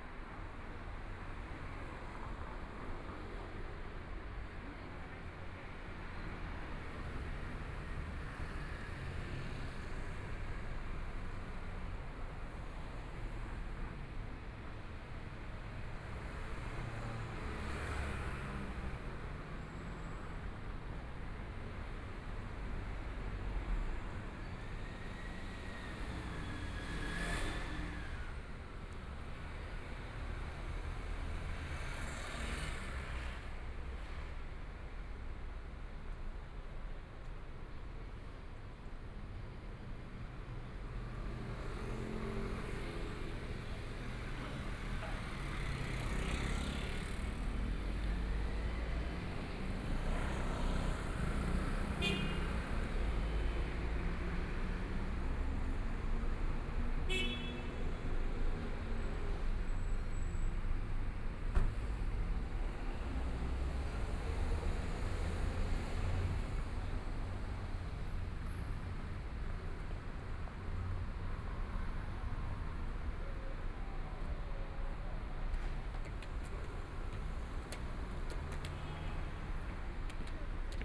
Nong'an St., Taipei City - In the Street
walking In the Street, Environmental sounds, Motorcycle sound, Traffic Sound, Binaural recordings, Zoom H4n+ Soundman OKM II
Taipei City, Taiwan, 6 February 2014